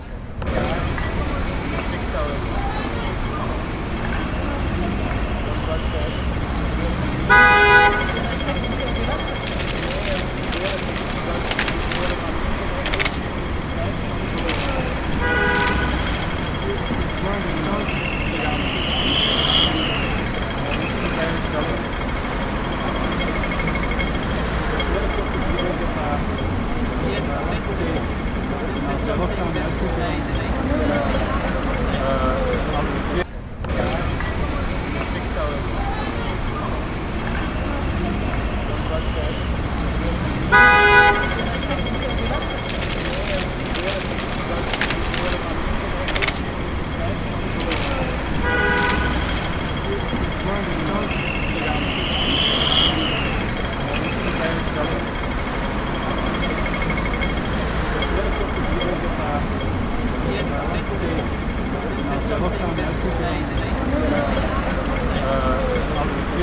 Sint-Jans-Molenbeek, Belgium - Crossing overlap
The soundscape of this "carrefour" is way more overwhelming than what its size would let imagine. Can be heard the different mode of transportation and their overlapping sounds, all of them in rythm, in pace with the beat of the crosswalk signal, itself orchestrating the all scenary.
Molenbeek-Saint-Jean, Belgium, 22 October 2016, 15:30